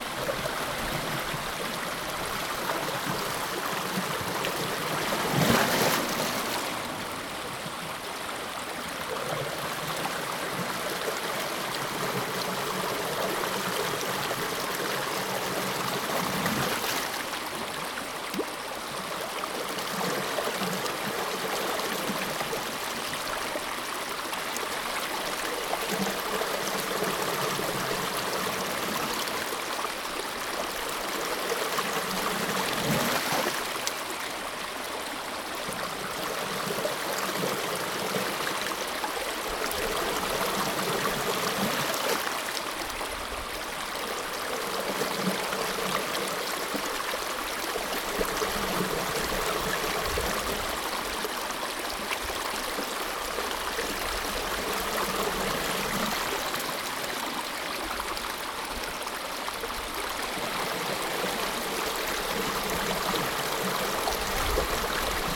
Minnehaha Avenue, Takapuna, Auckland, New Zealand - thorne bay lake outlet
One stream of the outflow of Lake Pupuke